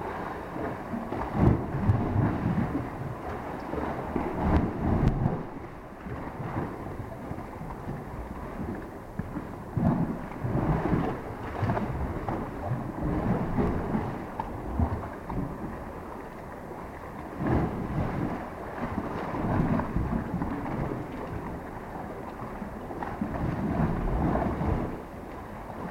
waves lapping at Portland Bill, Dorset, UK - waves lapping at Portland Bill
South West England, England, United Kingdom, 18 May